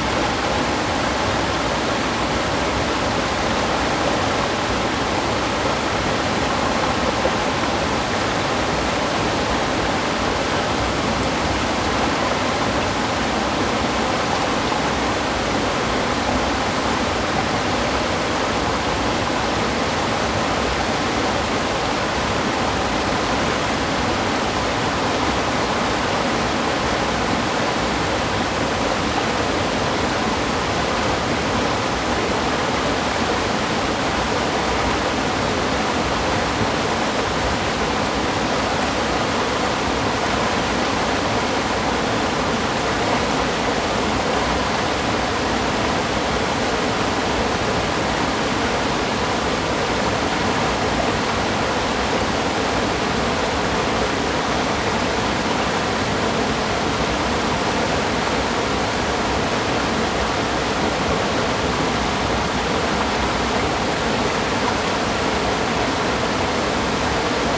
Stream sound, Waterfall
Zoom H2n MS+ XY
Jiaoxi Township, 白石腳路199號, 7 December 2016